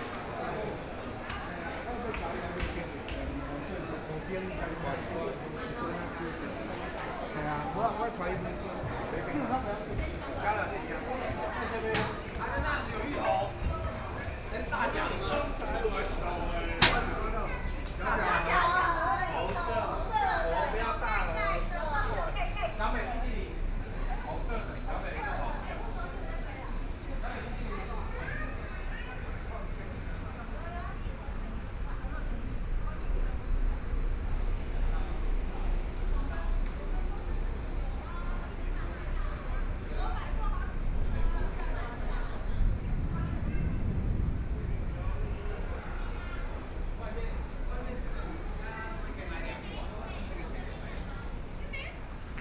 甲子蘭酒文物館, Yilan City - Tourism Winery
Walking through the Tourism Winery, Very hot weather, Many tourists
Sony PCM D50+ Soundman OKM II
Yilan City, Yilan County, Taiwan, 5 July 2014